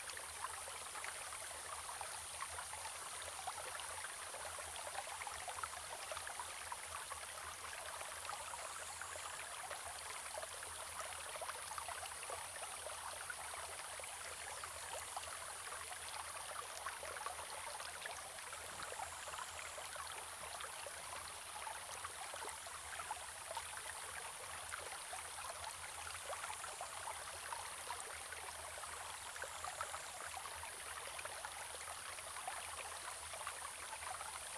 種瓜坑溪, 埔里鎮成功里, Taiwan - Small streams
Small streams
Zoom H2n Saprial audio